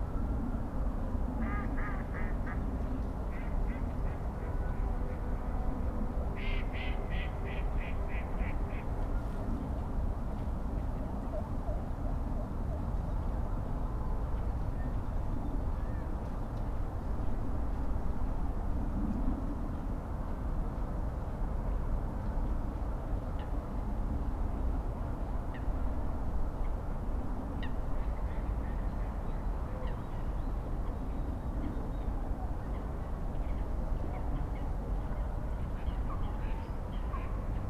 Tineretului Park, București, Romania - Winter Early Evening Ambience in Tineretului Park
A stroll through Tineretului Park in the early evening of January 21st, 2019: nature sounds combined with traffic hum in the background, police & ambulance sirens, close footsteps and voices of passerby. Using a SuperLux S502 ORTF Stereo Mic plugged into Zoom F8.